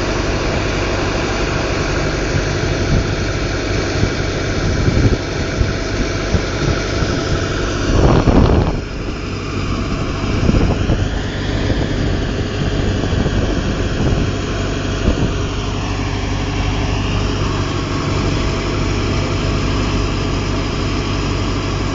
Rheinfähre Kaub, rhine river, ferry crossing
Ferry captains are proud and sad. They
e seamen, but they never set off to new lands. Approximately 2 minutes and 30 seconds from one bank to the other are yet a trip worth taking.
20 July 2010, 15:55